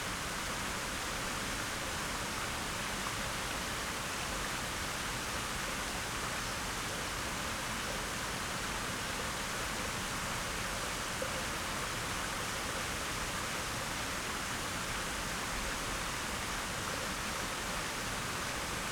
{
  "title": "Alnwick, UK - Grand Cascade ...",
  "date": "2016-11-14 12:30:00",
  "description": "Alnwick Gardens ... Grand Cascade ... lavalier mics clipped to baseball cap ...",
  "latitude": "55.41",
  "longitude": "-1.70",
  "altitude": "60",
  "timezone": "Europe/London"
}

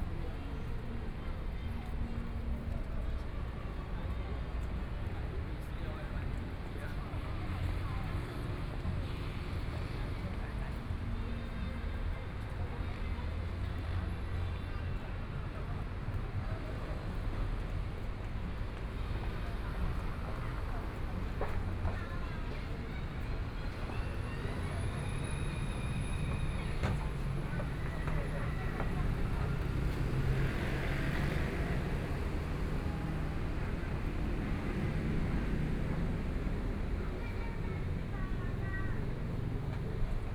{
  "title": "中山區成功里, Taipei City - Walk to MRT station",
  "date": "2014-02-16 19:18:00",
  "description": "Walk to MRT station, Traffic Sound\nPlease turn up the volume\nBinaural recordings, Zoom H4n+ Soundman OKM II",
  "latitude": "25.08",
  "longitude": "121.56",
  "timezone": "Asia/Taipei"
}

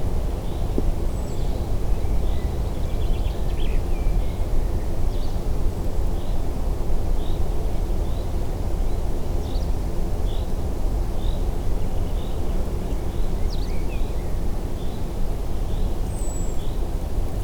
{
  "title": "Unnamed Road, Black lake - Blak lake",
  "date": "2019-06-10 13:11:00",
  "description": "very quiet ambience at the Black lake. (roland r-07)",
  "latitude": "54.72",
  "longitude": "17.66",
  "timezone": "GMT+1"
}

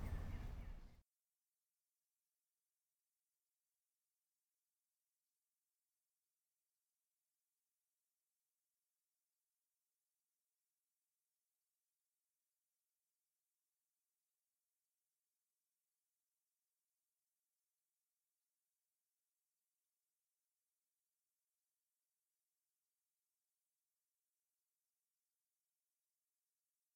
{
  "title": "Adrianópolis, Manaus - Amazonas, Brésil - Rua Teresina at down",
  "date": "2012-07-18 18:02:00",
  "description": "In one of the few streets still arborized of Manaus, some birds maintain a pastoral soundscape punctuated by the steady stream of cars. Some children home from school.",
  "latitude": "-3.11",
  "longitude": "-60.01",
  "altitude": "75",
  "timezone": "America/Manaus"
}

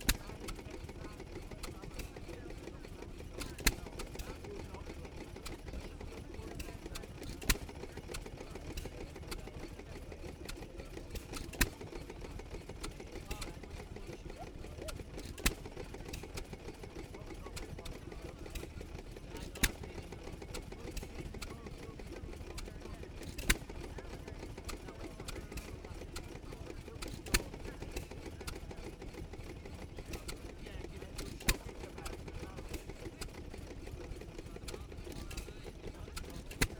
{
  "title": "Welburn, York, UK - amanco choreboy 1924 ...",
  "date": "2022-07-26 12:30:00",
  "description": "amanco chore boy 1924 stationary engine ... hit and miss open crank engine ... 1 and 3 quarter hp ... used as water pump ... corn sheller ... milking machines ... washing machines ... on display at the helmsley show ...",
  "latitude": "54.26",
  "longitude": "-0.96",
  "altitude": "47",
  "timezone": "Europe/London"
}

